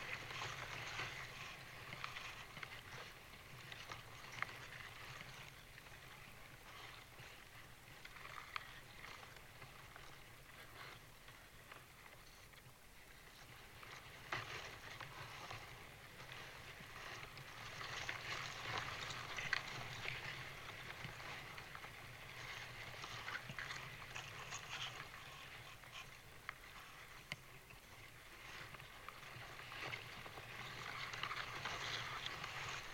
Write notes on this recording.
Spring was extremely late this year, but finally the leaves are out on this Himalayan Birch (Betula utilis) and are fluttering in the light breeze. The sound in the branches as picked-up by a piezo contact mic.